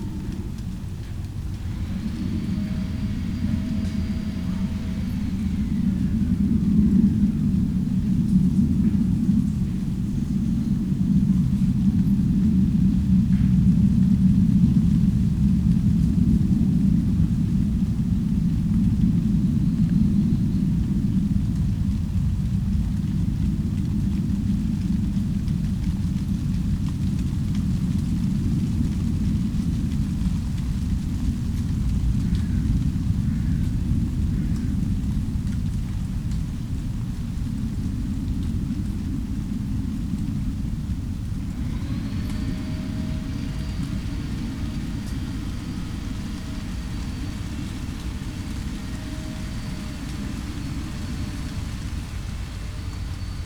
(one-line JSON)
{"title": "schönefeld, großziethen: mauerweg - borderline: berlin wall trail", "date": "2011-10-01 14:16:00", "description": "dry leaves of a bush rustling in the wind, do-it-yourselfer in the distance, a plane crossing the sky\nborderline: october 1, 2011", "latitude": "52.40", "longitude": "13.42", "altitude": "45", "timezone": "Europe/Berlin"}